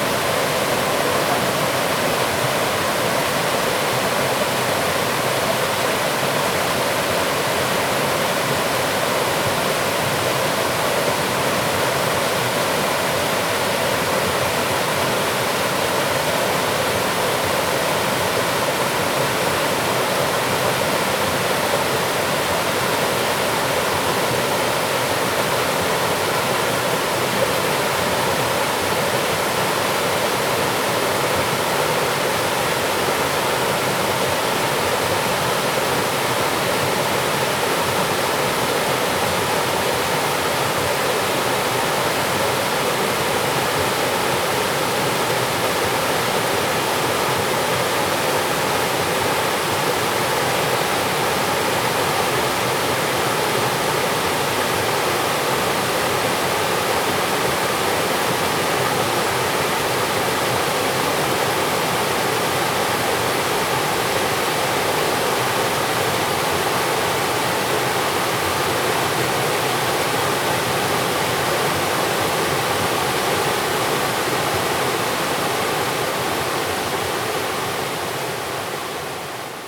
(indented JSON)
{
  "title": "五峰旗瀑布, 礁溪鄉Yilan County - Waterfalls and Stream",
  "date": "2016-12-07 10:14:00",
  "description": "Waterfalls and Stream\nZoom H2n MS+ XY",
  "latitude": "24.83",
  "longitude": "121.75",
  "timezone": "GMT+1"
}